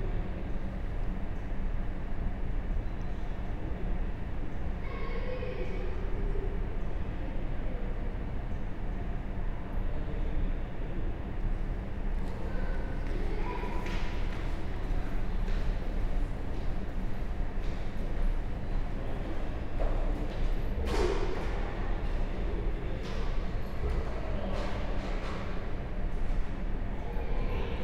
Valpy St, Reading, UK - JM Art Gallery, Reading Museum
Ten minute meditation in the John Madjeski Art Gallery at Reading Museum. School children chat in the room next door then begin to leave, a member of museum staff sits in silence, glued to her iPad, until a visitor arrives and asks questions (spaced pair of Sennheiser 8020s with SD MixPre6)
8 November 2017, 14:30